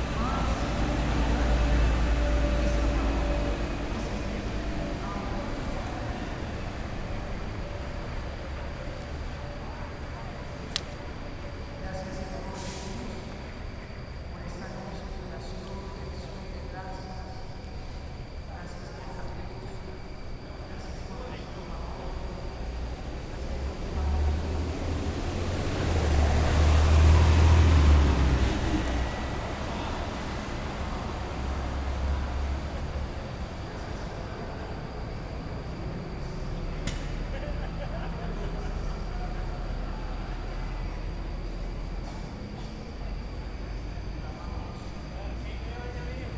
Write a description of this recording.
Se escucha los grillos, personas hablando, el sonido de bus, personas aplaudiendo. Se escucha un motor y un objeto caerse.